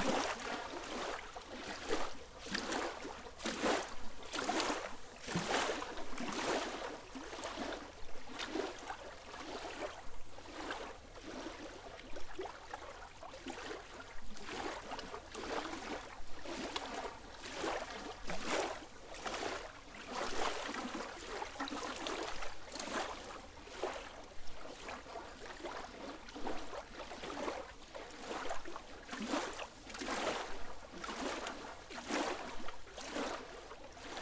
São Miguel-Azores-Portugal, 7 Cidades lake, wave movements
2 November